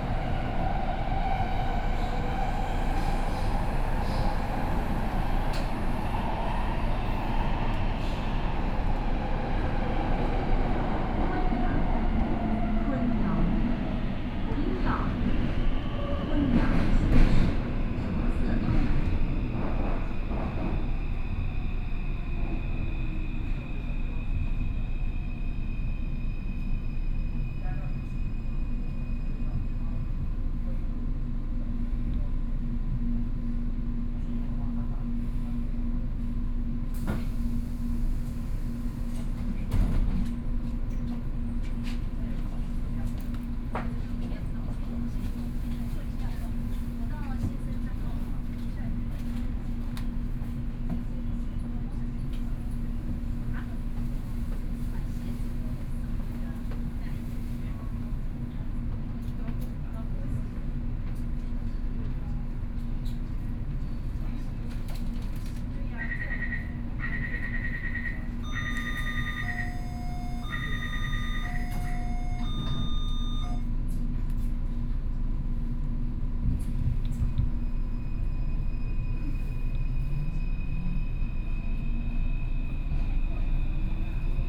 Nangang, Taipei - Blue Line (Taipei Metro)
from Nangang Exhibition Center station to Yongchun, Binaural recordings, Sony PCM D50 + Soundman OKM II
Nangang District, Taipei City, Taiwan, October 2013